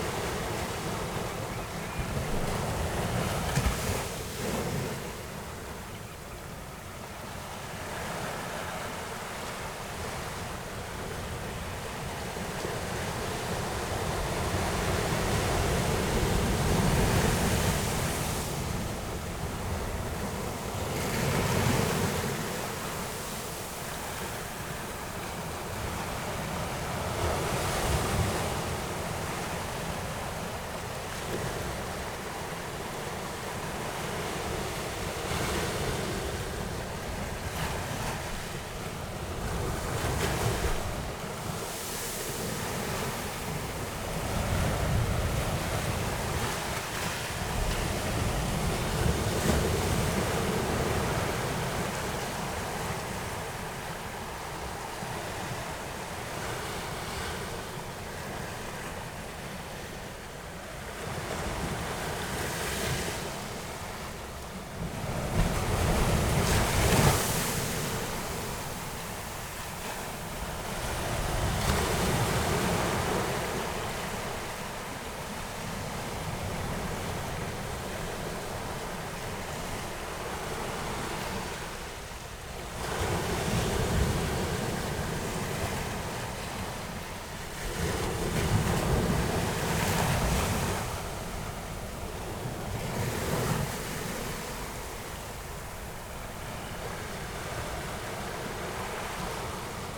Laguna Verde, Región de Valparaíso, Chile - pacific ocean, waves
Laguna Verde, near Valparaiso, Chile. Sound of the waves and water flowing back over the sand, recorded near a power station
(Sony PCM D50, DPA4060)